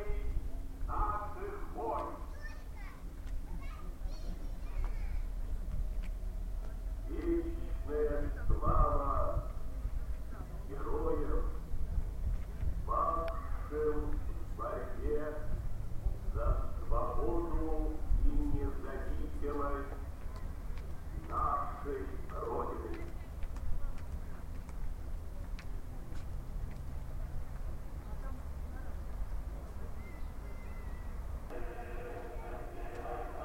Russland, Stalingrad Mamaew Kurgan 2
Volgograd Oblast, Russia